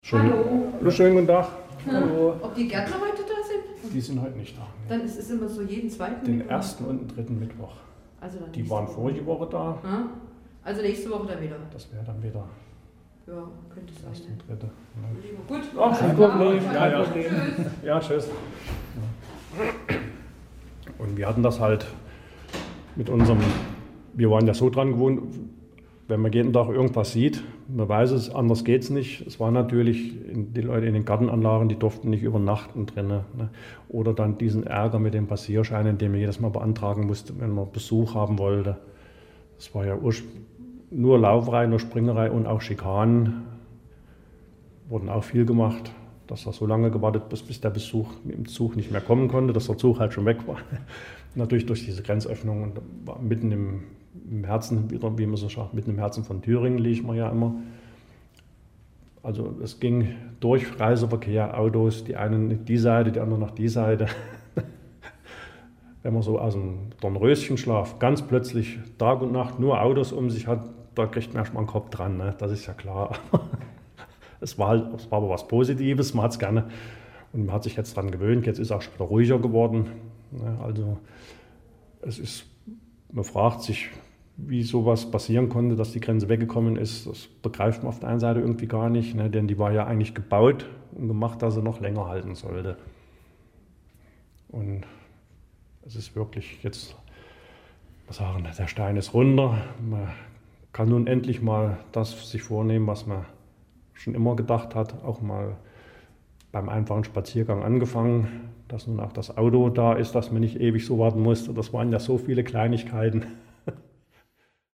{
  "title": "vacha - museum burg wendelstein",
  "date": "2009-08-16 22:37:00",
  "description": "Produktion: Deutschlandradio Kultur/Norddeutscher Rundfunk 2009",
  "latitude": "50.83",
  "longitude": "10.02",
  "altitude": "231",
  "timezone": "Europe/Berlin"
}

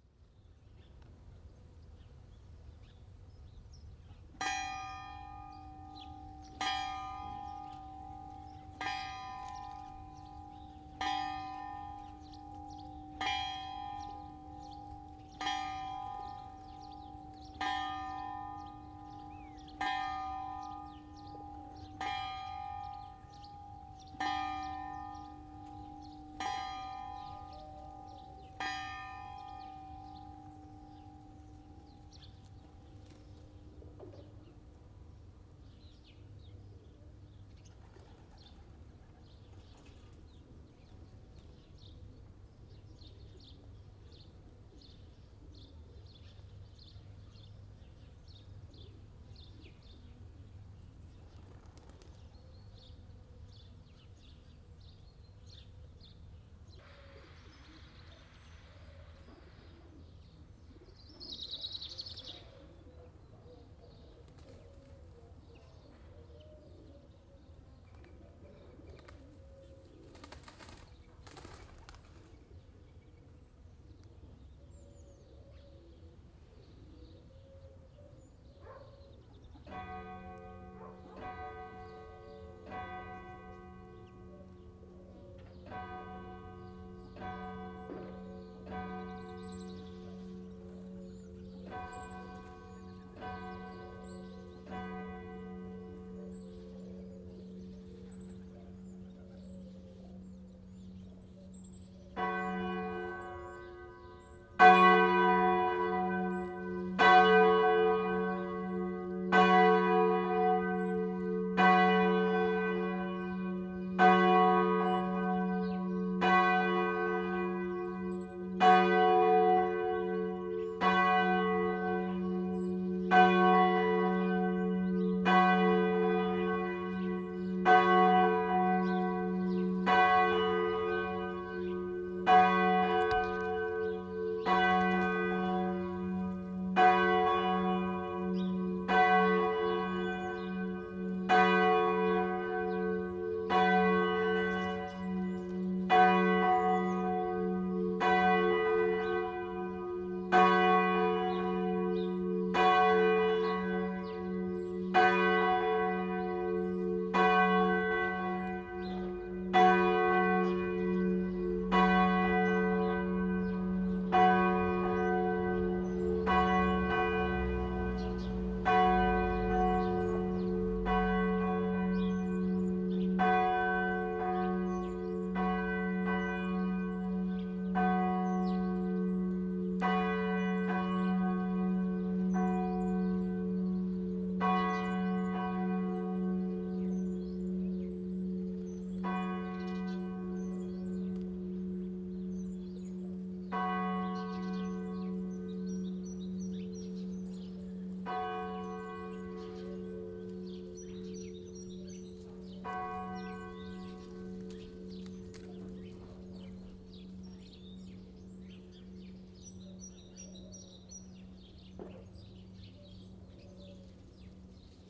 {
  "title": "Belcastel, Tarn, France - Bell Ringing in small village Noon",
  "date": "2006-02-12 12:00:00",
  "description": "Sound Device 744 + Mike Schoeps MS. Thomas L",
  "latitude": "43.65",
  "longitude": "1.76",
  "altitude": "235",
  "timezone": "Europe/Paris"
}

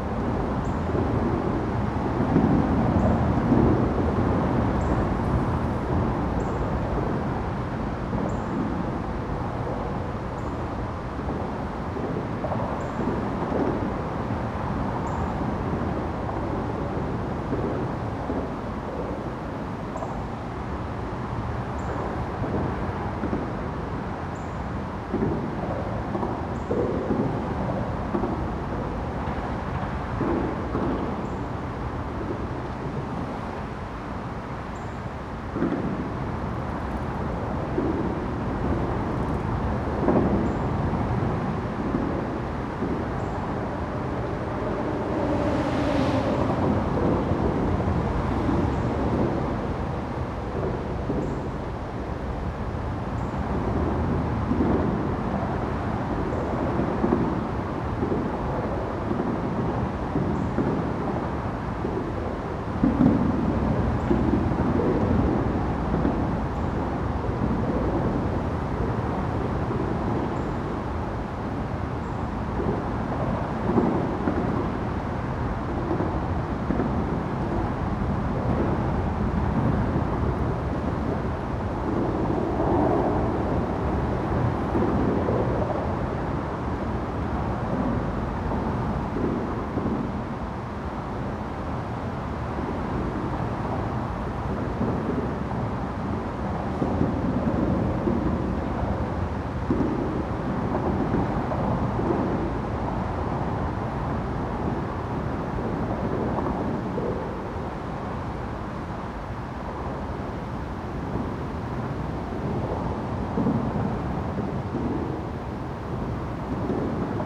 {
  "title": "Rottenwood Creek Trail, Atlanta, GA, USA - Under The I-75 Overpass",
  "date": "2020-10-01 16:12:00",
  "description": "Cars, trucks, and other vehicles pass over the I-75 overpass that runs over the Rottenwood Creek Trail. The result is a loud clunking sound that moves from side to side.\nRecorded on the uni mics of the Tascam Dr-100mkiii. Minor EQ was done in post to improve clarity.",
  "latitude": "33.88",
  "longitude": "-84.45",
  "altitude": "228",
  "timezone": "America/New_York"
}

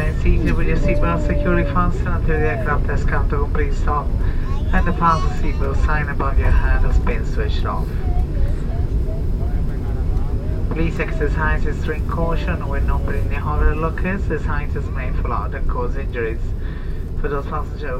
{
  "title": "Stansted Mountfitchet, UK - Plane descending and landing at Stansted Airport",
  "date": "2016-03-04 22:00:00",
  "description": "Recorded inside a plane descending on London Stansted Airport.",
  "latitude": "51.88",
  "longitude": "0.22",
  "altitude": "104",
  "timezone": "Europe/London"
}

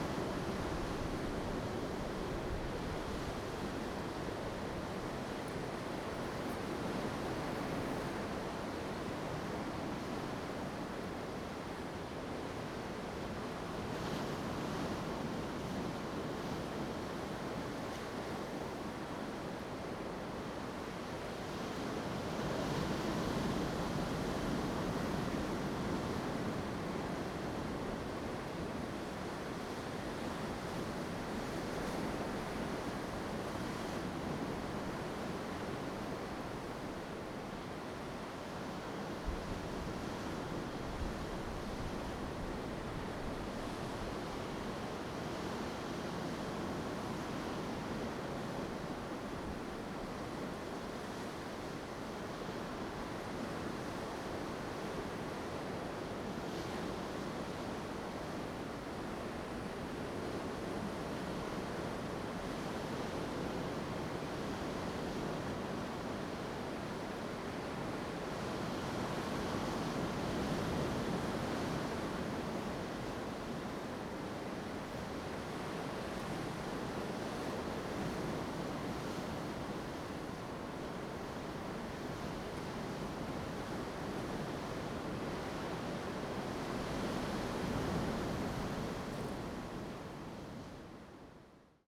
{
  "title": "Koto island, Taitung County - On the coast",
  "date": "2014-10-29 15:32:00",
  "description": "On the coast, Sound of the waves\nZoom H2n MS+XY",
  "latitude": "22.00",
  "longitude": "121.59",
  "altitude": "11",
  "timezone": "Asia/Taipei"
}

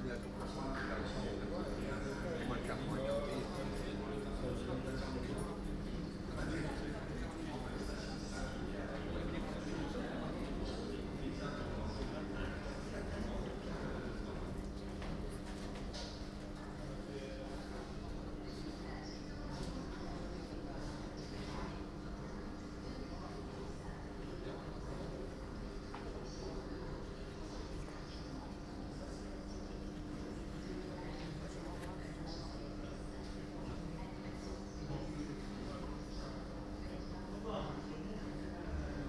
{
  "title": "Lille-Centre, Lille, Frankrijk - Metro trip Lille",
  "date": "2016-08-21 16:30:00",
  "description": "I chose Gare Lille Flandres as the location of this recording because the biggest opart was recorded there. But to be precise, it a trip from (Metro 1) Rihour to Gare Lille Flandres and (Metro 2) from Gare Lille Flandres to Gare Lille Europe.",
  "latitude": "50.64",
  "longitude": "3.07",
  "altitude": "28",
  "timezone": "Europe/Paris"
}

